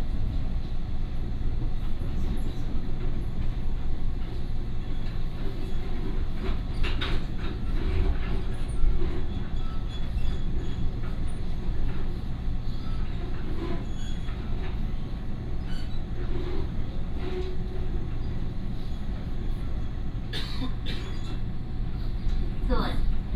Shalun Line, Guiren District - In the train compartment
from Shalun Station to Chang Jung Christian University Station